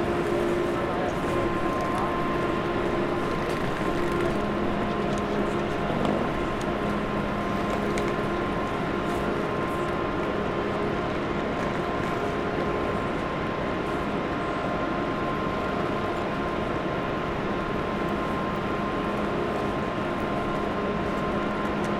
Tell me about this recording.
At track 9 again... the lockdown stopped four days ago... I hope it is audible that there are much more people and more also coming closer to the microphone. The station is more busy again. A beggar is asking what I am measuring.